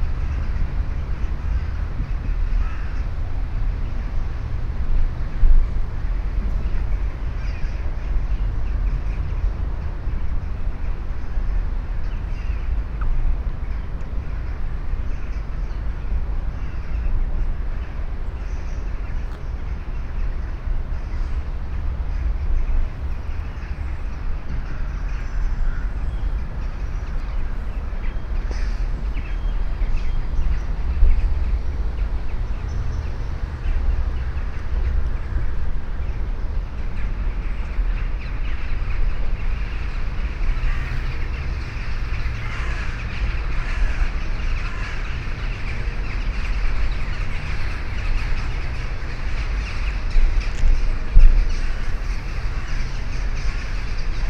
luxembourg, under pont adolphe
Standing under a high valley brigge. The constant sound of the distant traffic above, an ambulance with horn passing by and the sound of big groups of crows that gather in a tree nearby. In the end a big group of crows flying away.
international city scapes - topographic field recordings and social ambiences
18 November 2011, ~10:00